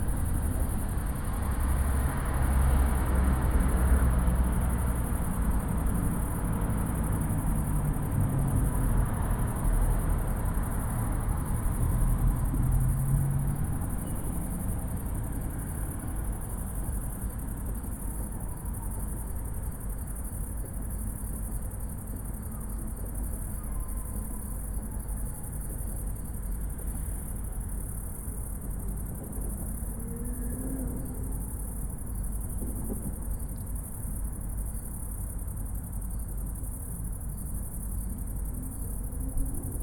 Recording of a summer night crickets with a train in the middle and other occasional city sounds like cars.
AB stereo recording (17cm) made with Sennheiser MKH 8020 on Sound Devices Mix-Pre6 II.
województwo małopolskie, Polska